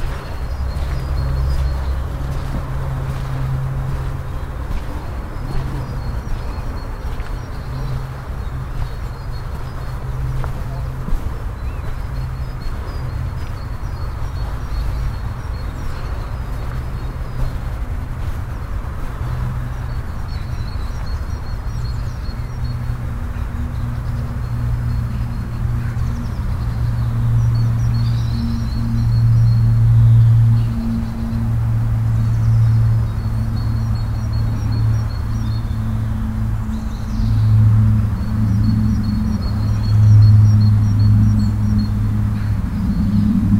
hochdahl, neanderkirche, friedhof
project: :resonanzen - neanderland soundmap nrw: social ambiences/ listen to the people - in & outdoor nearfield recordings
friedhof an der neanderkirche